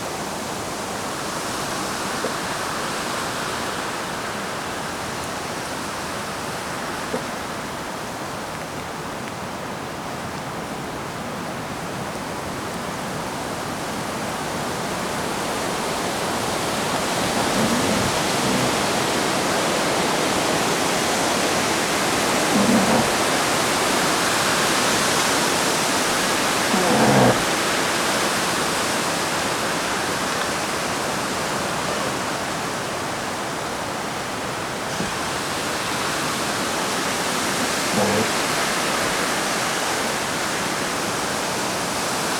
{"title": "Plumpton Woods", "date": "2009-09-25 17:27:00", "description": "A very windy autumn day. Two tree trunks rubbing and creaking together as the canopy above catches the wind.", "latitude": "54.21", "longitude": "-3.05", "altitude": "51", "timezone": "Europe/London"}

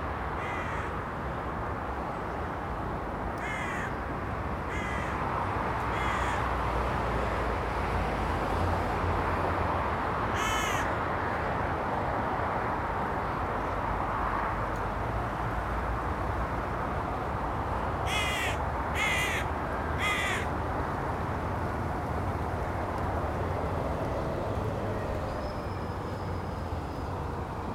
{"title": "Contención Island Day 40 inner southeast - Walking to the sounds of Contención Island Day 40 Saturday February 13th", "date": "2021-02-13 08:10:00", "description": "The Poplars High Street Little Moor Jesmond Dene Road Great North Road\nBy a hawthorn and bramble hedge\nshelter from a cold southerly wind\nTraffic slows and speeds\ncomes and goes\nA lone runner\ncrosses the road\nabove\nmagpies follow their own map\nalong treetops\nFootprints in the snow\ntracks into the bushes\nand to a hole in the fence", "latitude": "54.99", "longitude": "-1.62", "altitude": "63", "timezone": "Europe/London"}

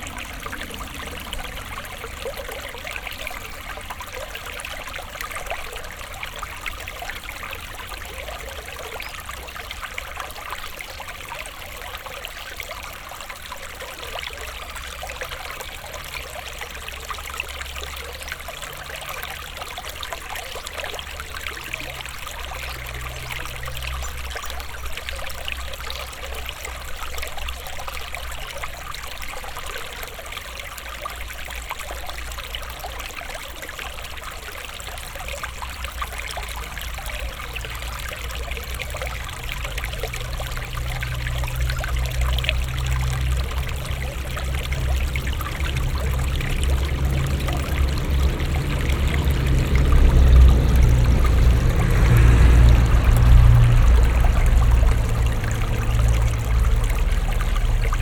{"title": "lellingen, small stream and tractor", "date": "2011-08-03 19:02:00", "description": "A small stream with nice sparkling water sounds flowing thru the small town. In the end a tractor passes the nearby bridge.\nLellingen, kleiner Bach und Traktor\nEin kleiner Bach mit schönem sprudelnden Wasser, der durch die kleine Ortschaft fließt. Am Ende fährt ein Traktor über die nahe Brücke.\nLellingen, petit ruisseau et tracteur\nUn petit ruisseau avec le doux bruit de l’eau coulant à travers la petite ville. A la fin, un tracteur passe sur le pont tout proche.\nProject - Klangraum Our - topographic field recordings, sound objects and social ambiences", "latitude": "49.98", "longitude": "6.01", "altitude": "291", "timezone": "Europe/Luxembourg"}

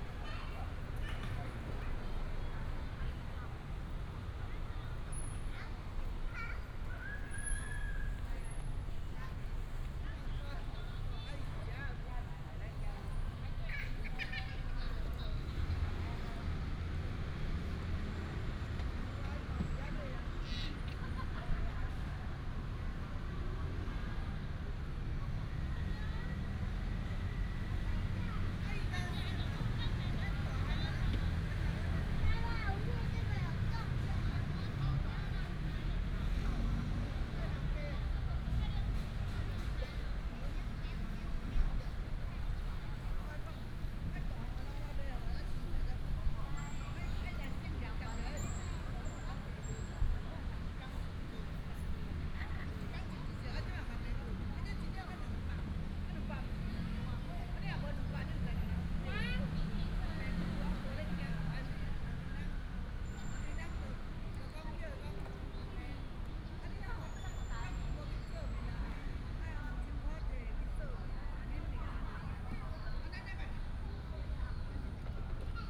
{"title": "Dalong Park, Datong Dist., Taipei City - in the Park", "date": "2017-04-09 17:00:00", "description": "in the Park, Traffic sound, sound of birds, Children's play area", "latitude": "25.07", "longitude": "121.52", "altitude": "10", "timezone": "Asia/Taipei"}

